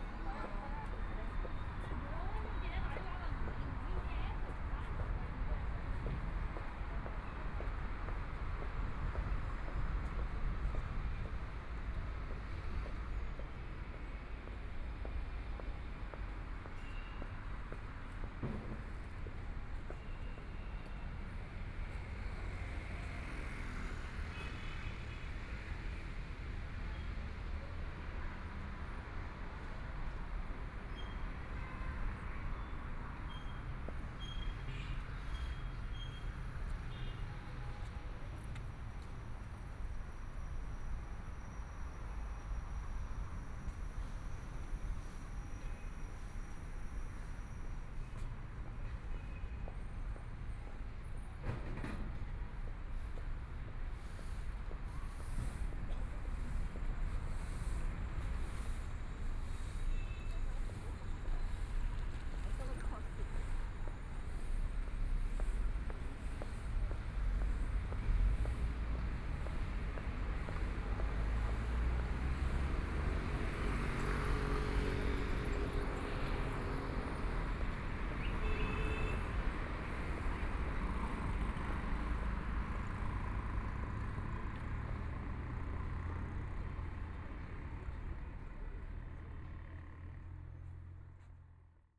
Kongjiang Road, Yangpu District - Walking on the road
Walking on the road, Follow the footsteps, Line through a variety of shops, Traffic Sound, Binaural recording, Zoom H6+ Soundman OKM II